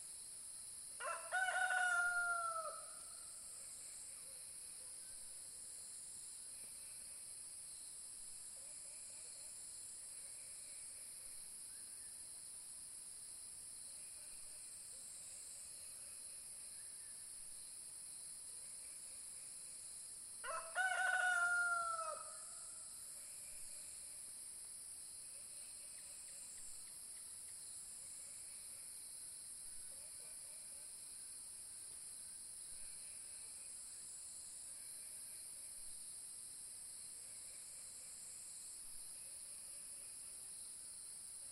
台灣南投縣埔里鎮成功里藏機閣安居樂活村 - The first sound every day
Song of the night of spotted frogs called early in the morning with only the sound of the rooster. In the possession of machine Court fixed time every day playing.